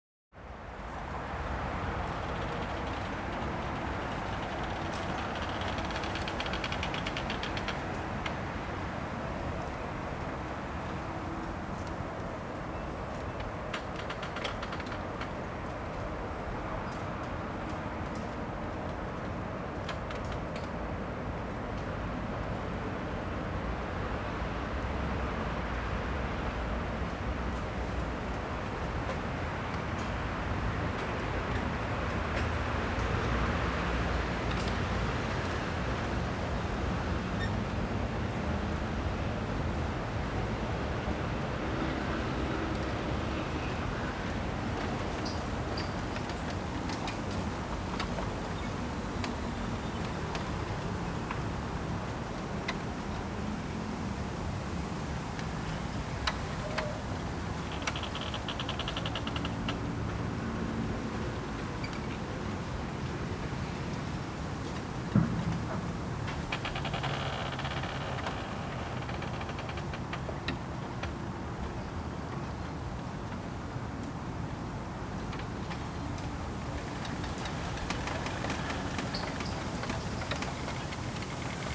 Jincheng Park, Tucheng Dist., New Taipei City - Bamboo forest
Bamboo forest, Traffic Sound
Zoom H4n +Rode NT4
19 December, 12:28